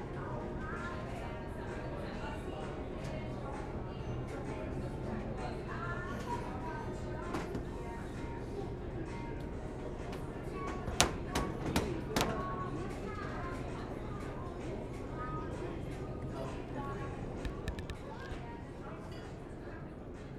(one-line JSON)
{"title": "Vilnius, at the restourant Milky Way", "date": "2011-07-13 20:20:00", "description": "the restourant Milky Way is arranged at Vilnius TV tower in 165 meters height. It has a slowly spinning floor, so visitors can observe a panorama of the city. Cracking sounds comes from floor spinning", "latitude": "54.69", "longitude": "25.21", "altitude": "173", "timezone": "Europe/Vilnius"}